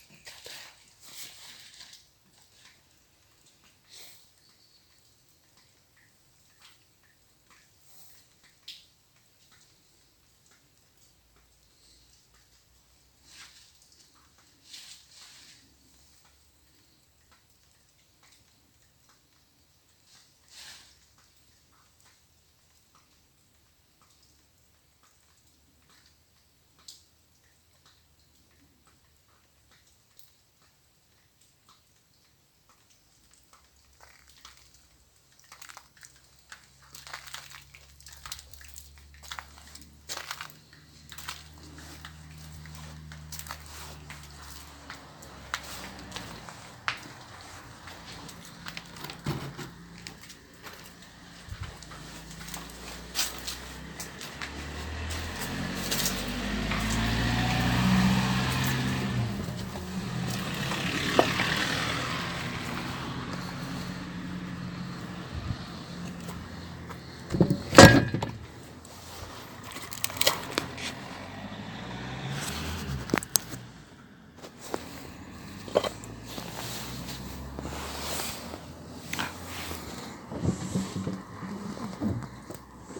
Via Montegrappa, Levice CN, Italia - Baia Blanca Reloaded
Audio recording inside former Bay Blanca nightclub now disused: late afternoon, winter, fog, light rain. Walking inside, staying for a while, walking back out, on the main road.